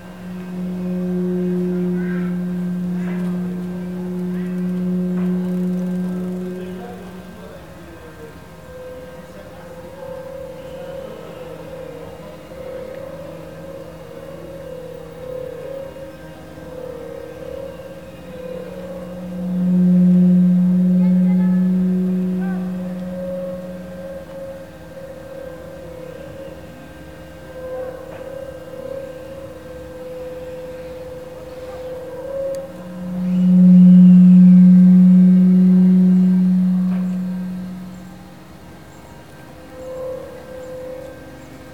avenue de lAérodrome de, Toulouse, France - vertical resonator
vertical resonator
Sub-low by chemistry of compressed air, oxygenate and propane mixture in steel tubes
Captation : zoom H4n
30 May 2021, 15:00